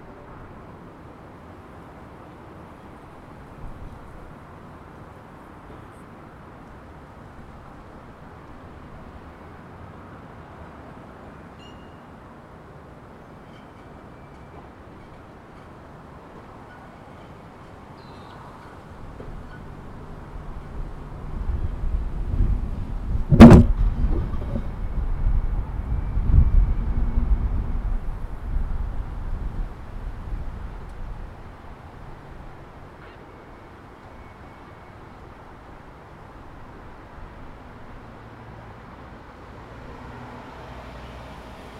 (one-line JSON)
{"title": "Puckey Ave, North Wollongong NSW, Australia - Monday Mornings at UOW Innovation", "date": "2018-05-14 10:50:00", "description": "Recording at the corner of Building 232 at the UOW innovation campus during a morning class.", "latitude": "-34.40", "longitude": "150.90", "altitude": "4", "timezone": "Australia/Sydney"}